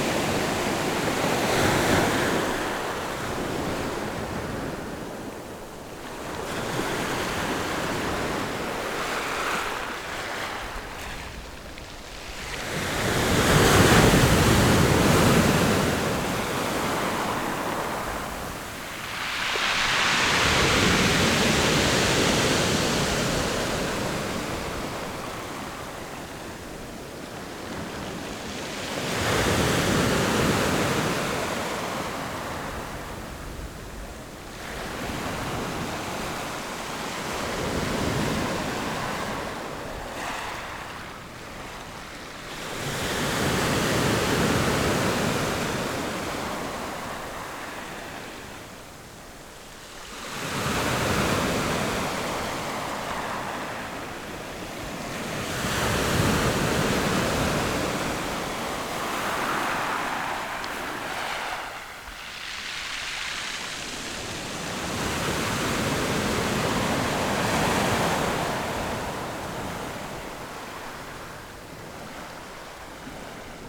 豐原里, Taitung City - the waves
At the seaside, Sound of the waves, Very hot weather
Zoom H6 XY+Rode Nt4